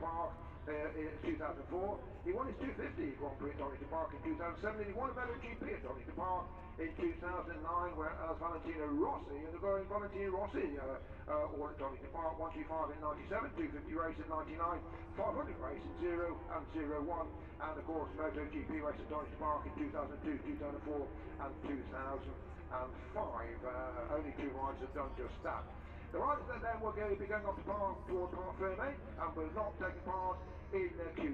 {
  "title": "Silverstone Circuit, Towcester, UK - british motorcycle grand prix 2019 ... moto grand prix ... q1 ...",
  "date": "2019-08-24 14:10:00",
  "description": "british motor cycle grand prix 2019 ... moto grand prix qualifying one ... and commentary ... copse corner ... lavalier mics clipped to sandwich box ...",
  "latitude": "52.08",
  "longitude": "-1.01",
  "altitude": "158",
  "timezone": "Europe/London"
}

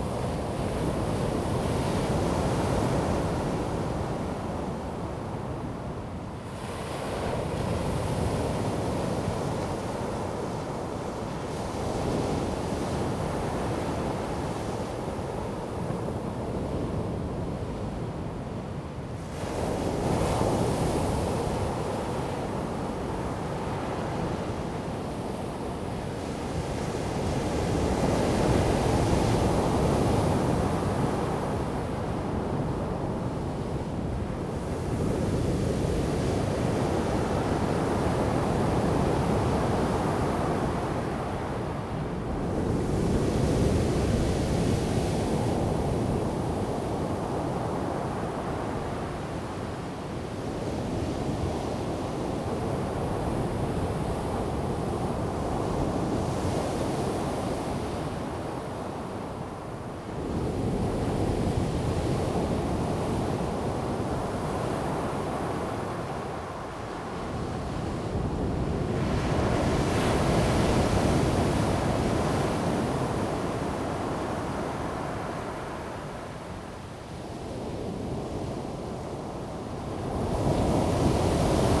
{
  "title": "河溝尾, 太麻里鄉 Taitung County - the waves",
  "date": "2018-03-14 13:16:00",
  "description": "At the beach, Sound of the waves\nZoom H2n MS+XY",
  "latitude": "22.68",
  "longitude": "121.05",
  "altitude": "7",
  "timezone": "Asia/Taipei"
}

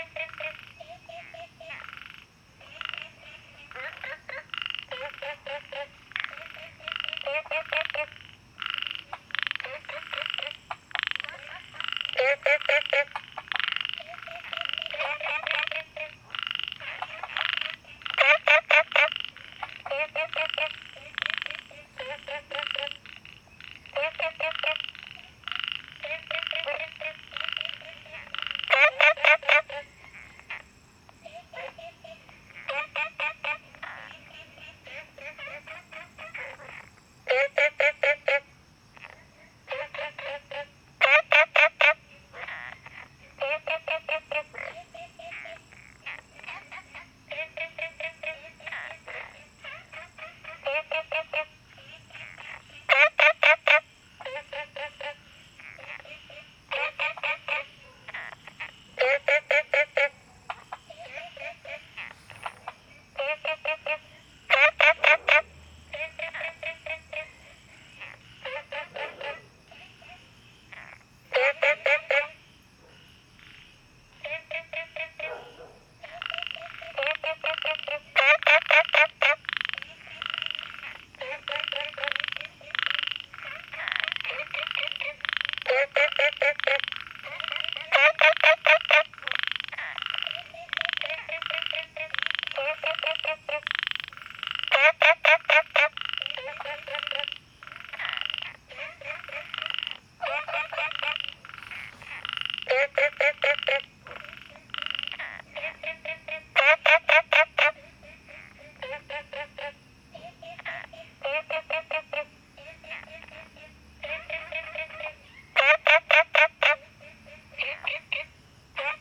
{
  "title": "青蛙ㄚ婆ㄟ家, Puli Township, Nantou County - A variety of frog sounds",
  "date": "2016-05-04 17:09:00",
  "description": "A variety of frog sounds\nZoom H2n MS+XY",
  "latitude": "23.94",
  "longitude": "120.94",
  "altitude": "463",
  "timezone": "Asia/Taipei"
}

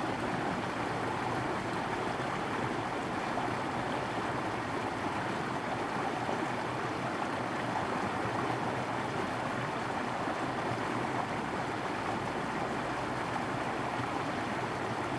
{
  "title": "東豐里, Yuli Township - Water sound",
  "date": "2014-10-08 17:23:00",
  "description": "In Farmland, Water sound\nZoom H2n MS +XY",
  "latitude": "23.33",
  "longitude": "121.34",
  "altitude": "133",
  "timezone": "Asia/Taipei"
}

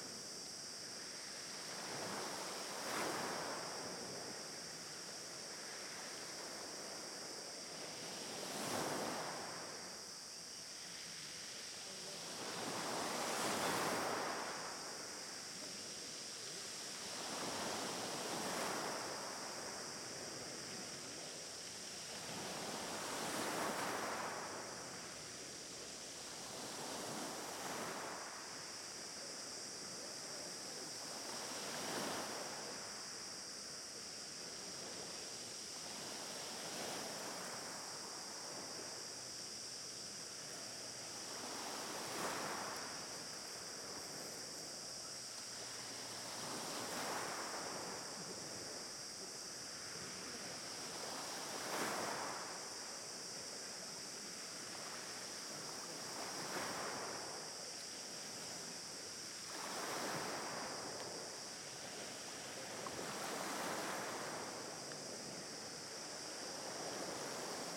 {
  "title": "Cap-negret, Altea, Alicante, Espagne - Altea - Province d'Alicante - Espagne - Plage de Cap Negret",
  "date": "2022-07-21 11:30:00",
  "description": "Altea - Province d'Alicante - Espagne\nPlage de Cap Negret\nAmbiance - cigales et vagues sur les galets... quelques voix\nZOOM F3 + AKG 451B",
  "latitude": "38.61",
  "longitude": "-0.03",
  "altitude": "9",
  "timezone": "Europe/Madrid"
}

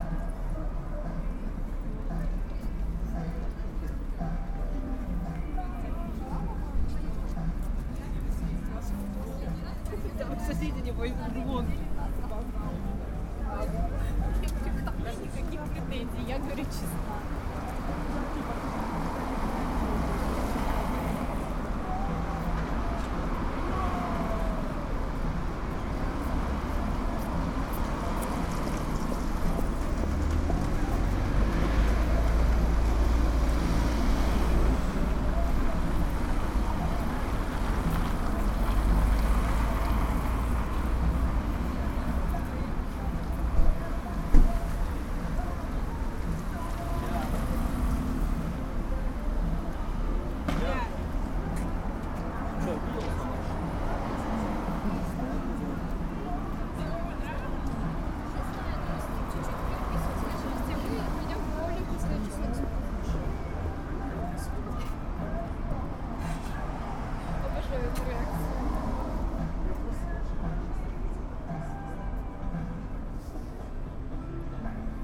{
  "title": "ул. Пятницкая, Москва, Россия - Spring Friday evening in the Pyatniskaya street",
  "date": "2021-03-26 19:45:00",
  "description": "Standing near an open terrace of a restaurant in the Pyatniskaya street. Music from nearby bars and restaurants can be heard. Cars are passing by, and some people are speaking in the background.\nRecorded on Tascam DR40.",
  "latitude": "55.73",
  "longitude": "37.63",
  "altitude": "139",
  "timezone": "Europe/Moscow"
}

